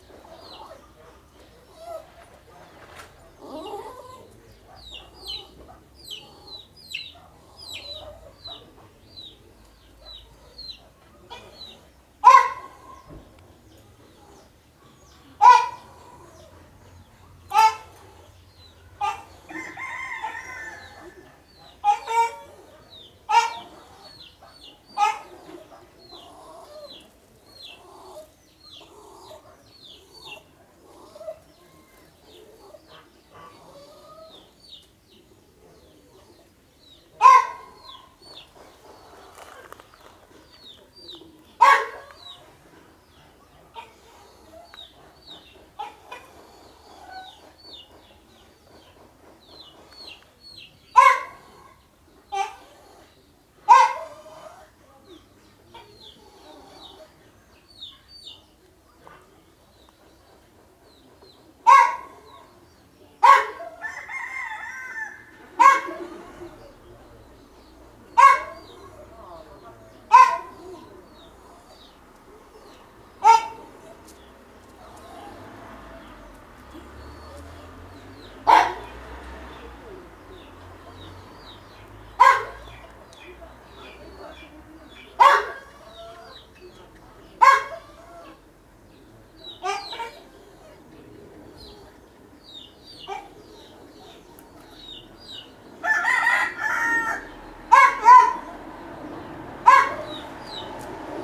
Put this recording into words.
a hen with her kids in the grass. A small dog close by has learnt to act, behave and to bark in a similar way to all the other chickens